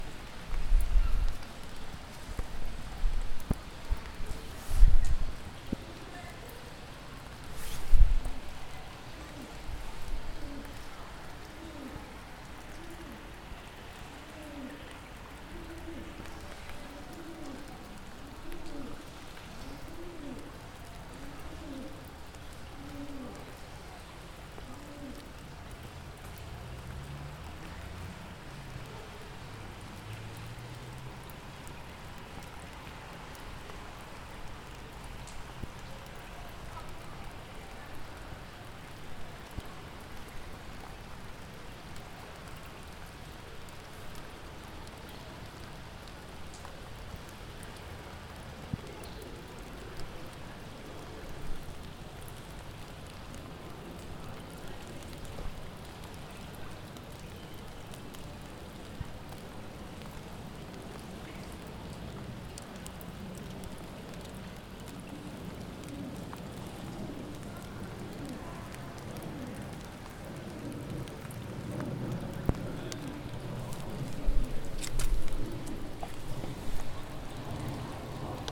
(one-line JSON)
{"title": "Quayside, Newcastle upon Tyne, United Kingdom - Quayside, Ouseburn", "date": "2019-10-13 15:39:00", "description": "Walking Festival of Sound\n13 October 2019\nPigeons under neath bridge, next to ouseburn river.", "latitude": "54.97", "longitude": "-1.59", "altitude": "9", "timezone": "Europe/London"}